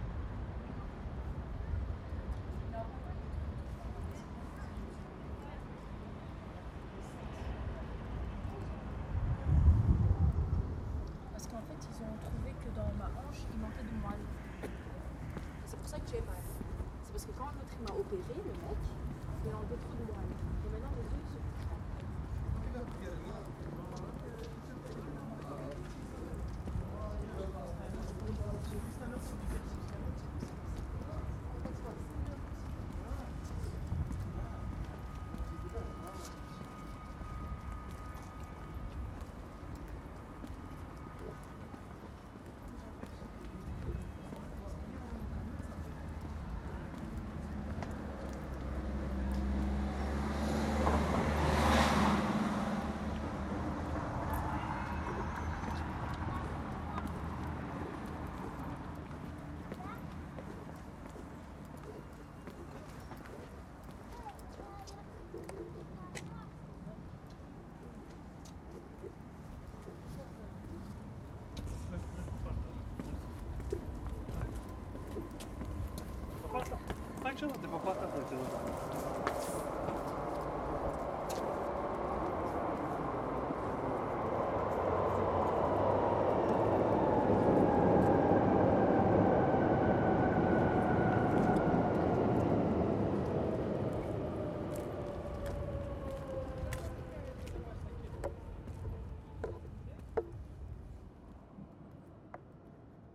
Rue Verheyden, Gare de l'Ouest, Molenbeek-Saint-Jean, Bruxelles - Weststation/Rue Verheyden-Ambiance
Multiple layers of the soundscape of Rue Verheyden near Weststation. The regular pulse of the train in the distance, traffic, birds, people walking, talking, wind and trees.
Anderlecht, Belgium, 15 October 2016, 15:00